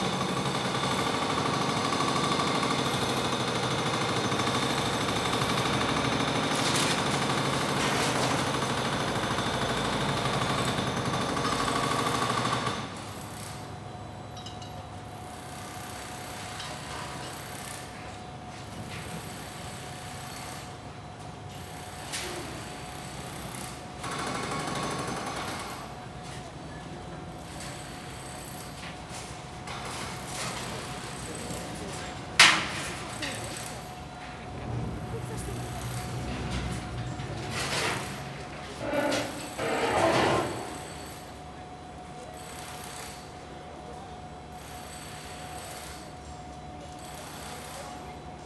Porto, Praça da Batalha - hollow building
construction works on one of the buildings at Praça da Batalha. only the front of the building is still standing. rest is an empty shell. the sounds of demolition echo around the whole district.